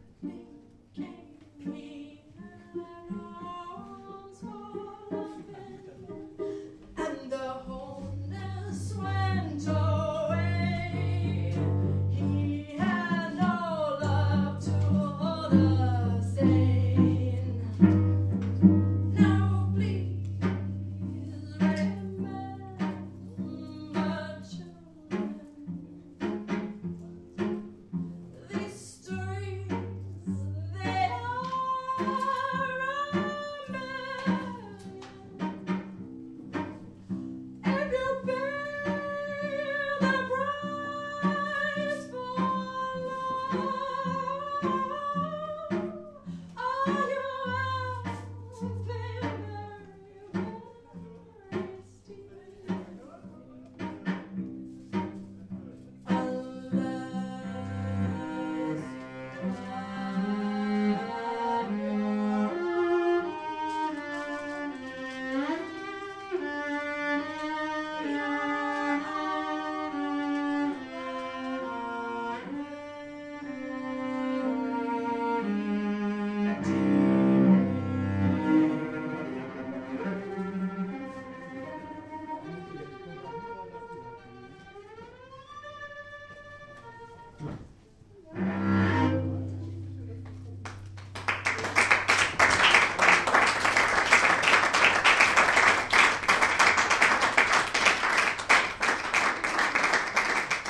berlin, hobrechtstraße: mama bar - the city, the country & me: concert of ashia grzesik at mama bar
ashia grzesik - pay to be loved, live at mama
the city, the country & me: may 8, 2008